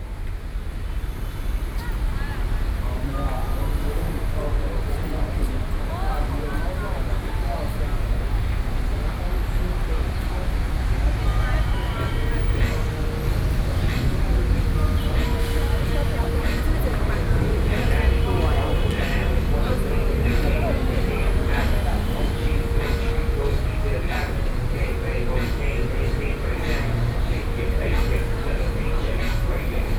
Taipei, Taiwan - in front of the apple store